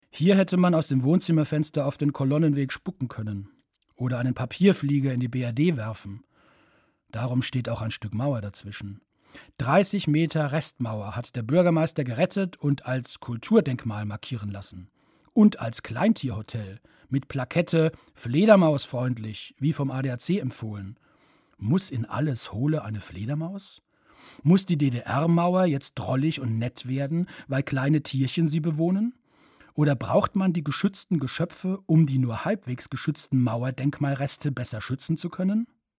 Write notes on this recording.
Produktion: Deutschlandradio Kultur/Norddeutscher Rundfunk 2009